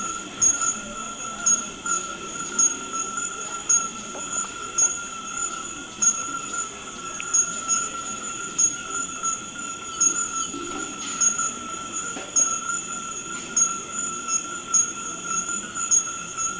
Unnamed Road, Kpando, Ghana - little bush near market with tree frogs
little bush near market with tree rogs